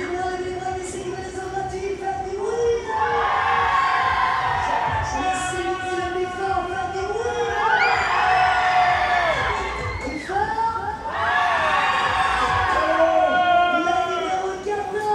St-Omer (Pas-de-Calais)
Ducasse - fête foraine
ambiance - extrait 2 - fin d'après-midi
Fostex FR2 + AudioTechnica BP425
Hauts-de-France, France métropolitaine, France, 27 February